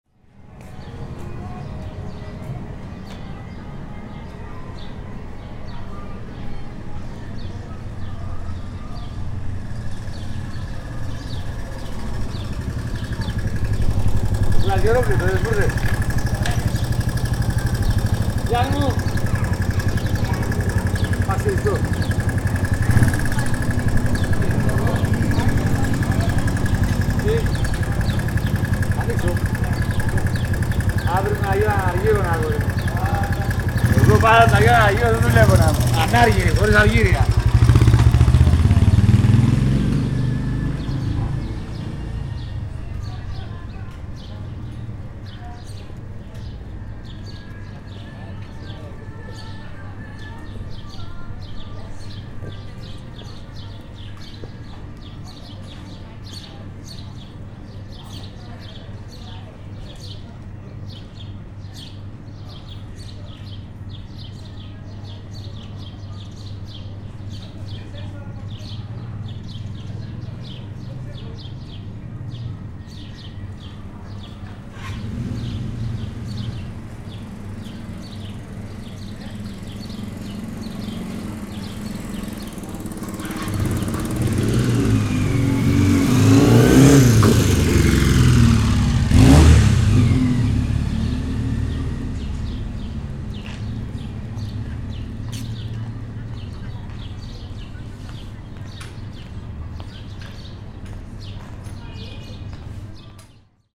Patmos, Skala, Griechenland - Seitengasse
Sonntag nachmittag, es ist heiss.
Juni 2002
Skala, Greece, 1 June 2002